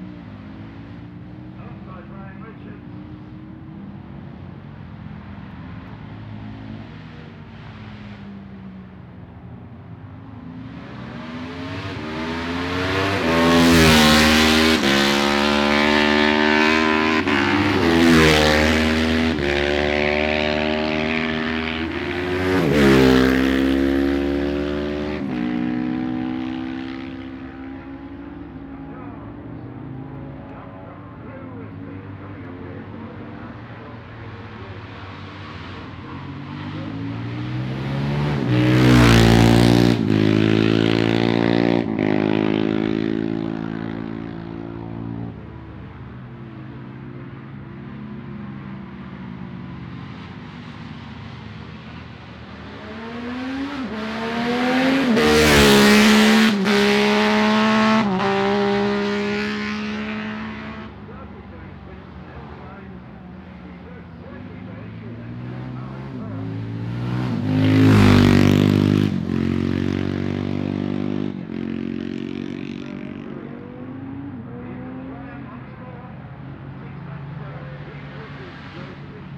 Jacksons Ln, Scarborough, UK - Barry Sheene Classic Races 2009 ...
Barry Sheene Classic Races ... one point stereo mic to minidisk ... some classic bikes including two Patons and an MV Agusta ...